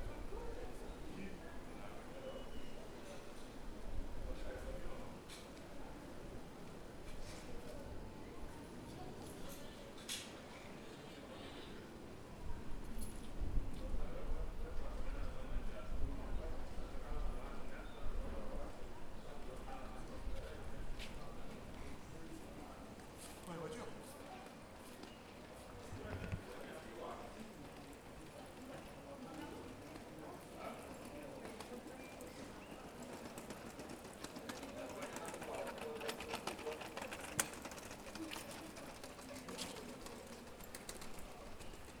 Gabriel Péri / Franklin, Saint-Denis, France - Outside Carribean Restaurant, 32 R. Gerard Péri
This recording is one of a series of recording mapping the changing soundscape of Saint-Denis (Recorded with the internal microphones of a Tascam DR-40).